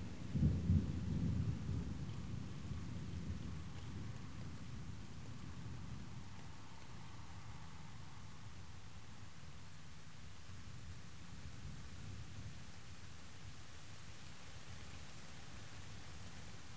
Coryluslaan, Heerhugowaard, Nederland - Rain, thunder
Recorder : Sony PCM-D100
Microphones : Clippy EM172
Best sound : Use a headphone for most realistic sound.
Made the recording out of window second floor, about 8 meter from the pavement. Outside in garden of neighbours was a party tent with an plastic material roof. Further on is the road about 30 meters after the house. I placed the tiny clippy EM172 stereo microphone on a distance of 40 centimeter apart, placed on a wardrobe hanger just outside the window. All start quiet but in the procress you can hear the rain, the sound of rain on the plastic roof of partytent, car passing by on wet pavement and of course the incoming thunder. Max recording level was -6Db.